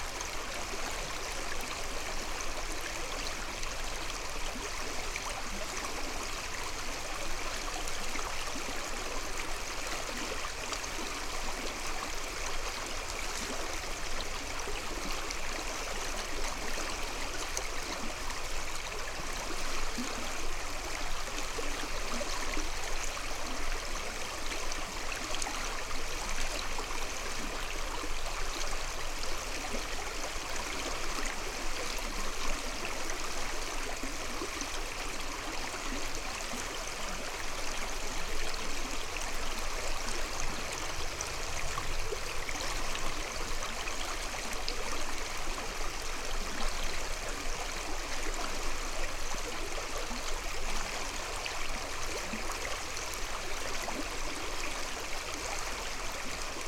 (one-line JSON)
{"title": "Pačkėnai, Lithuania, the river", "date": "2020-02-18 15:10:00", "description": "river Viesa. fallen trees form some kind of dam.", "latitude": "55.44", "longitude": "25.57", "altitude": "143", "timezone": "Europe/Vilnius"}